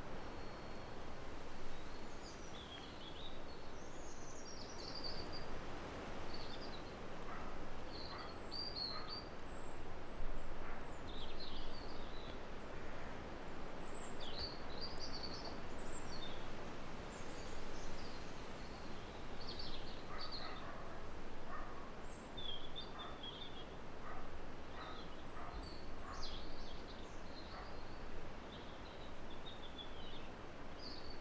Culliford Tree Barrows, Dorset, UK - wind and bird song
Part of the Sounds of the Neolithic SDRLP project funded by The Heritage Lottery Fund and WDDC.